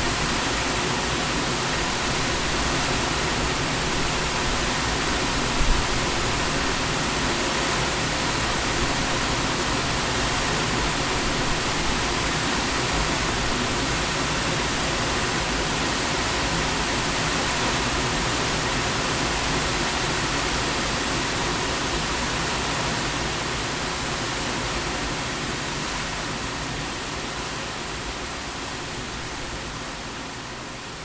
Aber Falls / Rhaeadr Fawr waterfall, recorded using a Zoom H4n recorder and Rode wind muff and tripod.